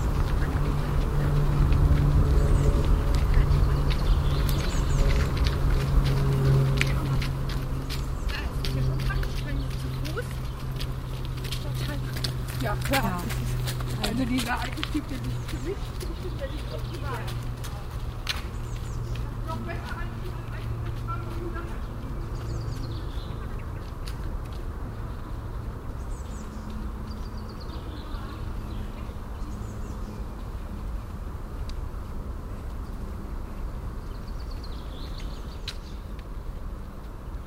langenfeld, further moor, jogger
jogger passing by while talking
project: : resonanzen - neanderland - social ambiences/ listen to the people - in & outdoor nearfield recordings